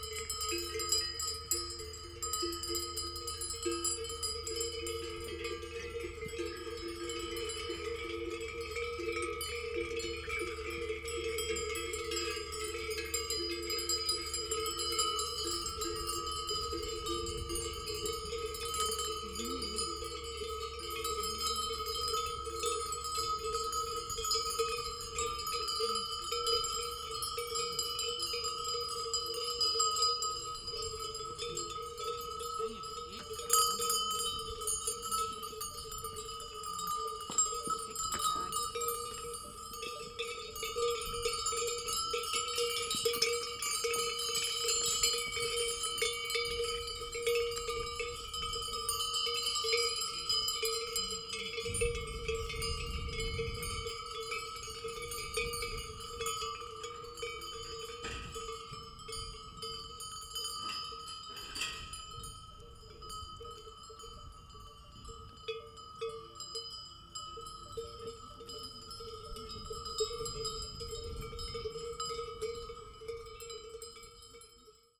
{"title": "farm, near Bordeira, Portugal - cows and goats", "date": "2017-10-29 15:20:00", "description": "a flock of cows and goats all with bells, rounded up by farmers (Sony PCM D50, DPA4060)", "latitude": "37.20", "longitude": "-8.89", "altitude": "8", "timezone": "Europe/Lisbon"}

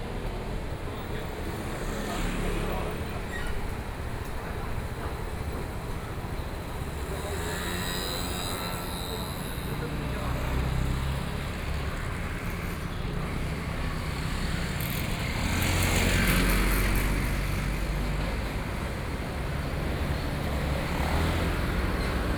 {"title": "Wuchang St., Taipei City - Walking in the market area", "date": "2014-04-03 12:21:00", "description": "Walking in the market area, Traffic Sound, Walking towards the north direction", "latitude": "25.07", "longitude": "121.54", "altitude": "15", "timezone": "Asia/Taipei"}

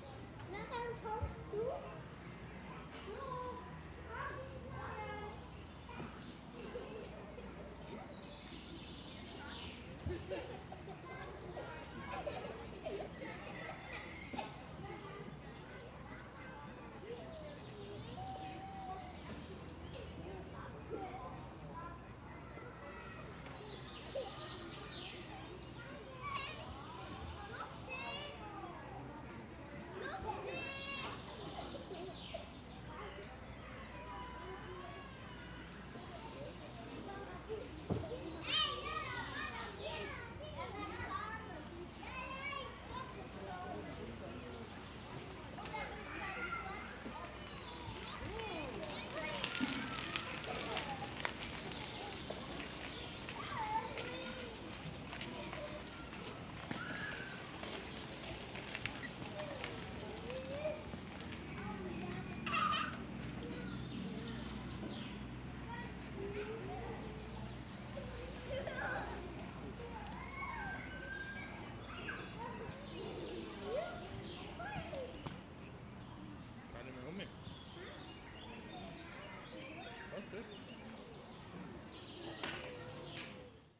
{"title": "Fürstenweg, Salzburg, Österreich - Hellbrunner Park", "date": "2021-06-02 11:17:00", "description": "Hellbrunner Park. Am Spielplatz.", "latitude": "47.76", "longitude": "13.07", "altitude": "428", "timezone": "Europe/Vienna"}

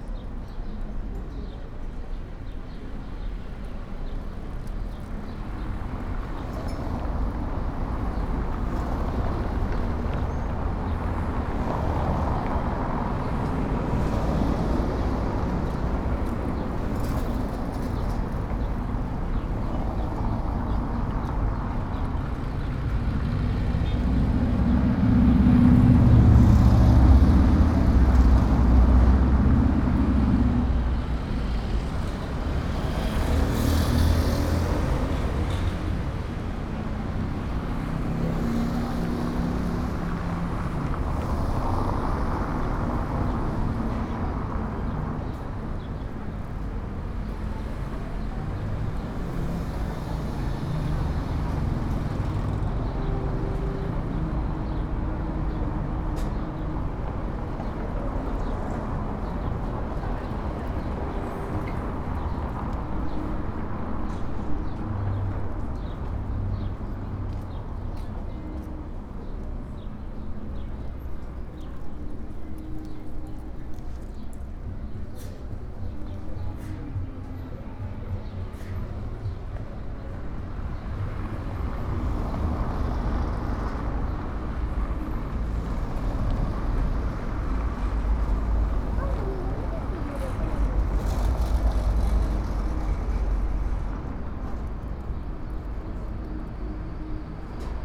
{"title": "C. Francisco I. Madero, Centro, León, Gto., Mexico - En las mesas de la parte de afuera de la nevería Santa Clara.", "date": "2022-03-29 18:14:00", "description": "At the tables outside the Santa Clara ice cream parlor.\nI made this recording on march 29th, 2022, at 6:14 p.m.\nI used a Tascam DR-05X with its built-in microphones and a Tascam WS-11 windshield.\nOriginal Recording:\nType: Stereo\nEsta grabación la hice el 29 de marzo de 2022 a las 18:14 horas.", "latitude": "21.12", "longitude": "-101.68", "altitude": "1806", "timezone": "America/Mexico_City"}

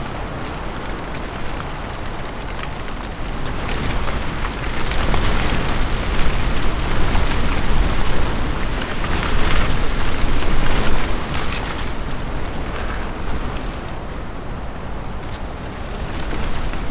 {"title": "Recordings in a Fatsia, Malvern, Worcestershire, UK - Wind Storm", "date": "2021-04-04 23:00:00", "description": "Overnight wind storm recorded inside a leafy shrub for protection. I used a Mix Pre 6 II with 2 Sennheiser MKH 8020s.", "latitude": "52.08", "longitude": "-2.33", "altitude": "120", "timezone": "Europe/London"}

